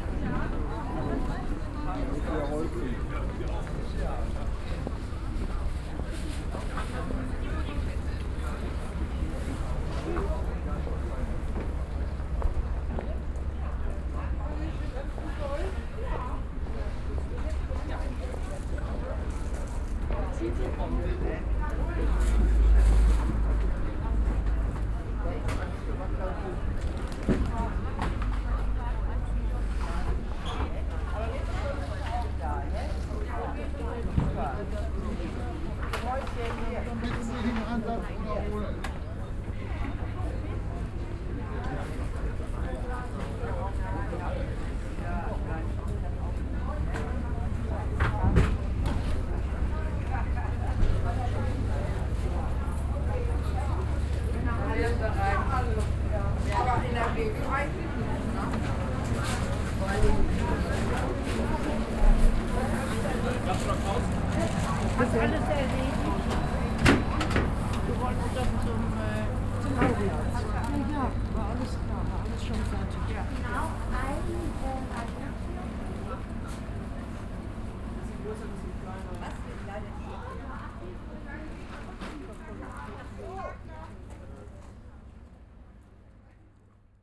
Green Market, Marktpl., Hamm, Germany - walking along stalls
walking East to West along the stalls, beginning in Oststr.
fewer stalls, fewer shoppers than other wise, every one waiting patiently in queues, chatting along…
Nordrhein-Westfalen, Deutschland, 2 April